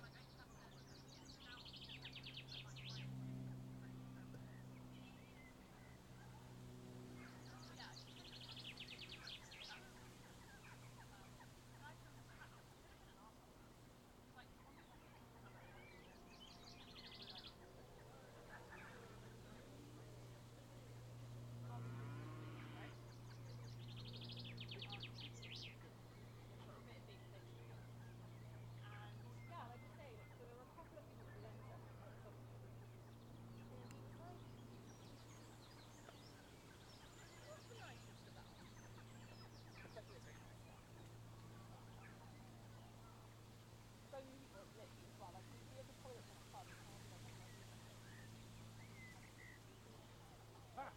Grantchester Meadows, Cambridge, UK - Grantchester Meadows Late June Evening
Grantchester Meadows on a June evening. Zoom F1 and Zoom XYH-6 Stereo capsule attached to a tree in the meadows along the river footpath. Light wind gently rustling the leaves of the trees, birdsong and passers-by. Quieter than usual (even with the lockdown) given a colder turn in the weather.